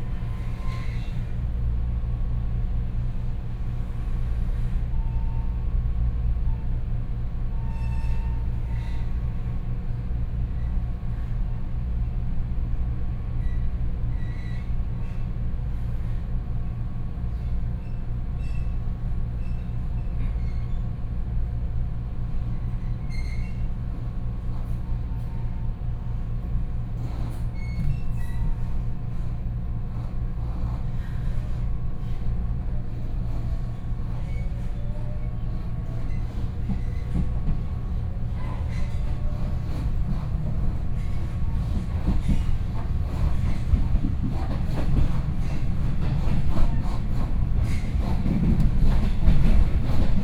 Dadu District, Taichung City - Local Express
from Changhua Station to Chenggong Station, Binaural recordings, Sony PCM D50+ Soundman OKM II
Taichung City, Taiwan